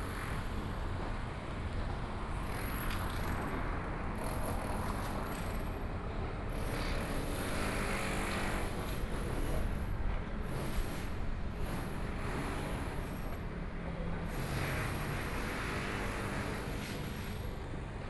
walking in the Street, Traffic Sound, Sound from construction